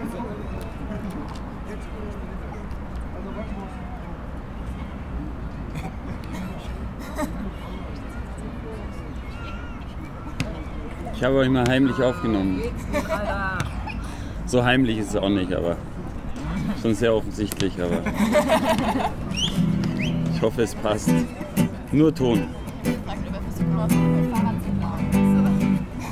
walther, park, vogel, weide, musik, gitarre, singende menschen, das leben ist eine autobahn, reden, gespräche, lachen, fußball, heimliche aufnahme, flugzeug, gitarrengeplänkel, kinder, geschrei, waltherpark, vogelweide, fm vogel, bird lab mapping waltherpark realities experiment III, soundscapes, wiese, parkfeelin, tyrol, austria, anpruggen, st.